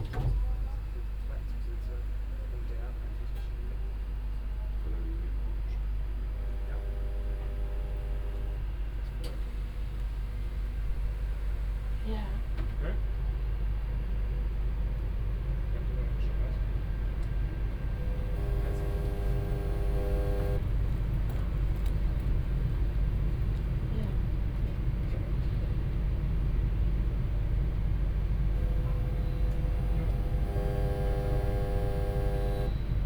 Hamm, Hauptbahnhof, main station. the train can't continue because of an electrical defect. doors are open, people waiting in and outside, making phone calls, talking, ideling. a train passes at the opposite track. a strange periodic hum from a hidden control panel indicates malfunction.
(tech note: Olympus LS5, OKM2, binaural.)
Hamm, Germany, 15 April 2012, 19:15